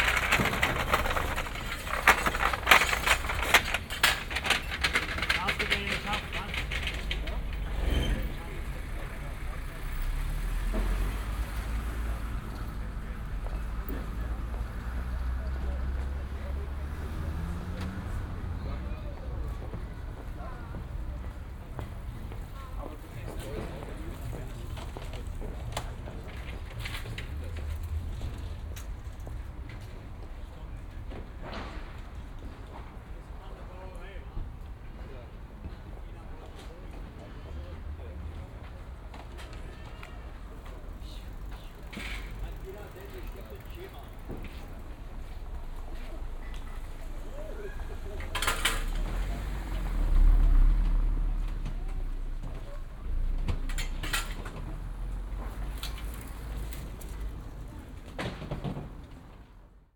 maybachufer, markt, eingang
09.09.2008 19:50
Wochenmarkt, Ein-/Ausgang Ost, Aufräumarbeiten, Ordner regelt Verkehr.
market entry east, cleanup, man regulates traffic
Berlin, 9 September